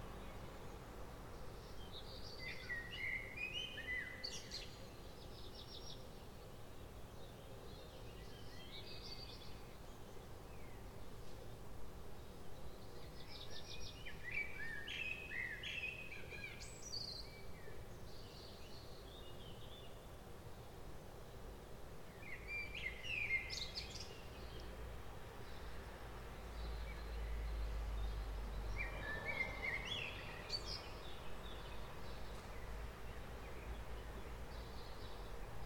mec suivant du 20/04/2020 à 07h35 effectué en XY à la fenêtre de mon studio micros DM8-C de chez Prodipe (dsl) XLR Didier Borloz convertisseur UAD Apollo 8 Daw Cubase 10 pro . Pas de traitement gain d'entrée +42Db . Eléments sonores entendus essentiellement des oiseaux quelques véhicules et des sons de voisinage